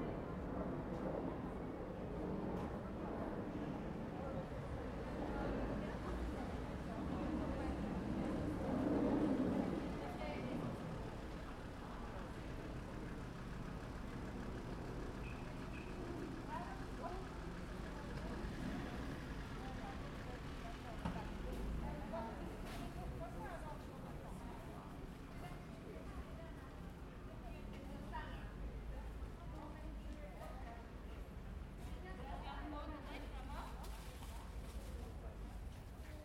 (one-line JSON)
{"title": "Śródmieście, Gdańsk, Polska - You're an artist", "date": "2014-09-20 13:01:00", "description": "Recorded near the Main Town marketplace, the place is the new night life/pub spaces in the city. Recorded with Zoom H2n, by Mikołaj Tersa", "latitude": "54.35", "longitude": "18.65", "altitude": "9", "timezone": "Europe/Warsaw"}